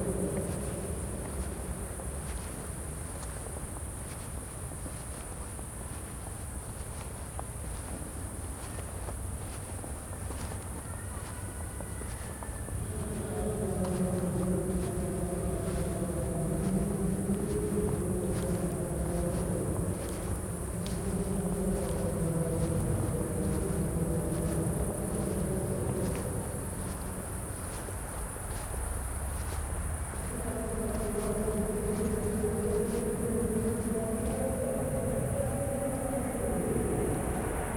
Maribor, Mestni park - evening walk in park
walk through dark Mestni park, from this spot to the backyard of Mladinska 2.
(PCM D-50, DPA4060)